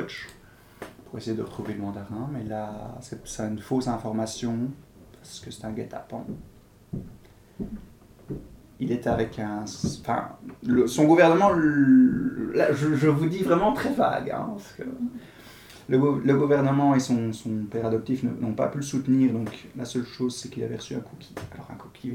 Court-St.-Étienne, Belgique - Claude Barre
Fragment of an interview of Claude Barre, who write books. He explains why he writes detective stories.
29 February 2016, 14:05